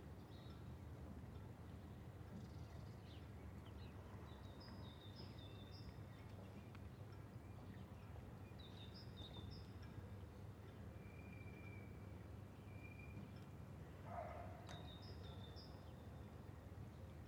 Rue de lArmide, La Rochelle, France - P@ysage Sonore - Landscape - La Rochelle COVID Flight of semi distant bells 9h
Bus, cars, pedestrians and at 4 ' > 9 o'clock, and flight of bells
4 x DPA 4022 dans 2 x CINELA COSI & rycote ORTF . Mix 2000 AETA . edirol R4pro